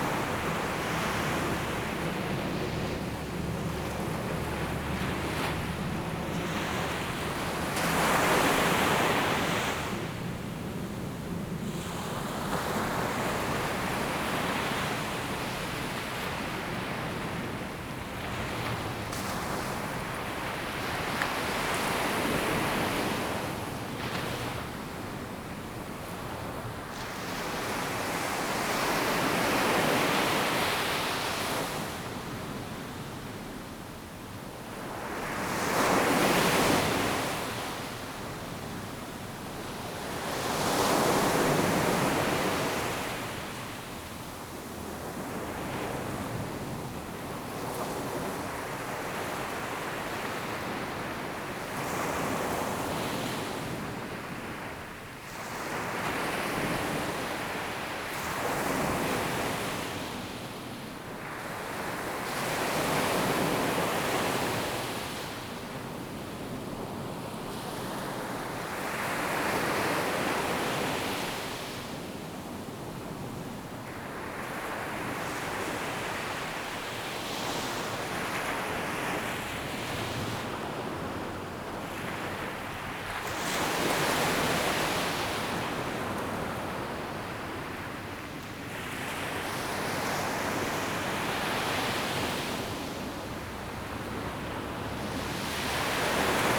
Qianshuiwan Bay, New Taipei City, Taiwan - At the beach
Aircraft flying through, Sound of the waves
Zoom H2n MS+H6 XY
15 April